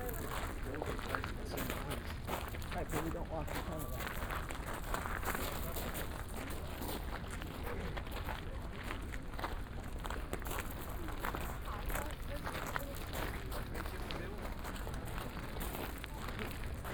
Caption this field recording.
(binaural) steps of tourists on a crushed limestone make a crunching sound. it's a very distinct sound for the Acropolis. a woman goes over the rope in the restricted area and gets spotted by one of the guards. (sony d50 + luhd pm-01bin)